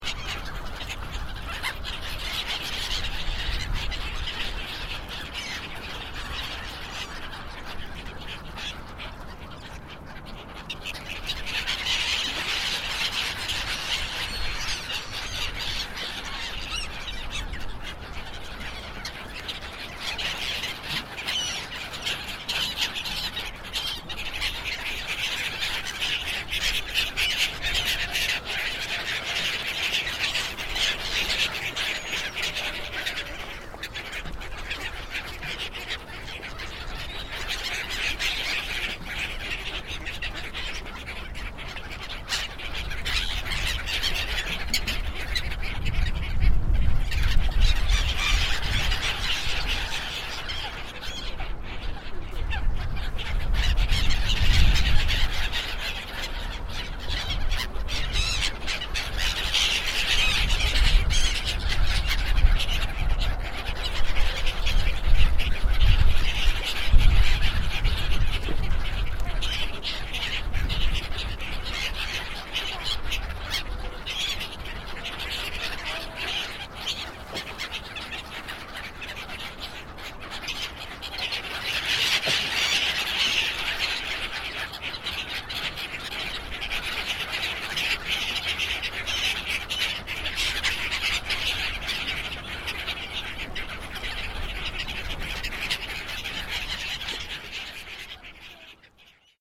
Náplavka, Feeding of gulls and swans - AIR VERSION
Feeding of gulls and swans in freezy sunday afternoon in the bank of Smíchov. (air version)
23 November 2008, 18:20